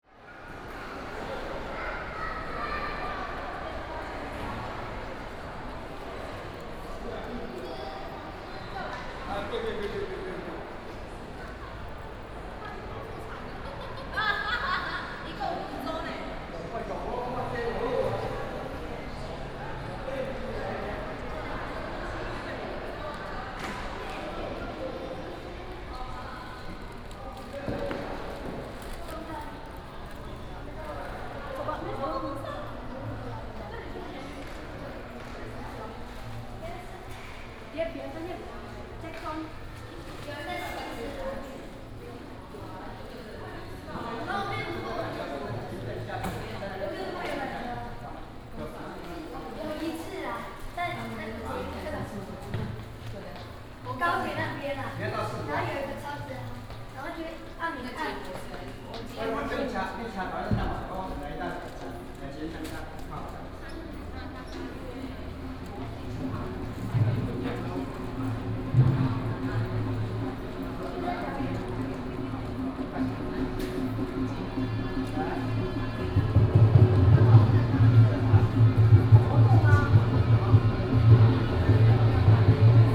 New Taipei City Government, Taiwan - Walking in the City Hall lobby
Walking in the City Hall lobby